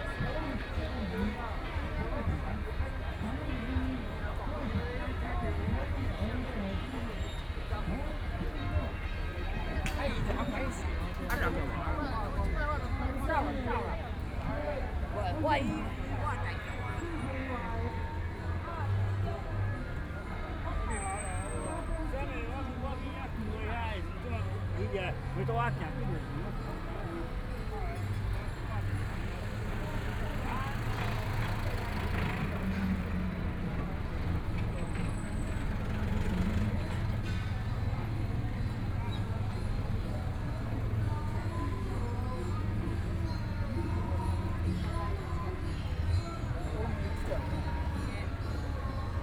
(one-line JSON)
{"title": "Peace Memorial Park, Taiwan - Memorial Day rally", "date": "2014-02-28 15:37:00", "description": "228 rally to commemorate the anniversary event .Sunny afternoon\nPlease turn up the volume a little\nBinaural recordings, Sony PCM D100 + Soundman OKM II", "latitude": "25.04", "longitude": "121.51", "timezone": "Asia/Taipei"}